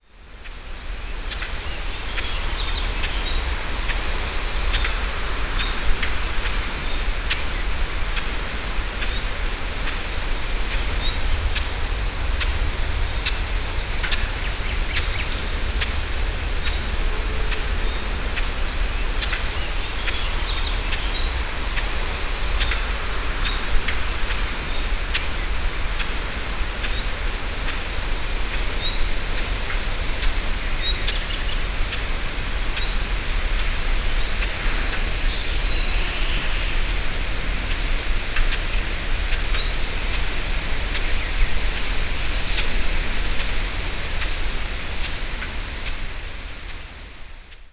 Niévroz, chemin du Pont Henri, automatic water spray